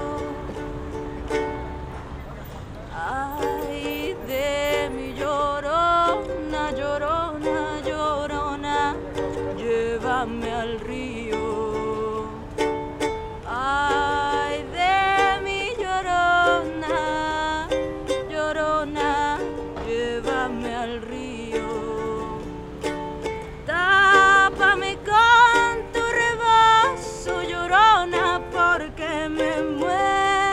Merida - Mexique
À l'entrée du "Passage de la Révolution", quelques minutes avec Cecia Dominguez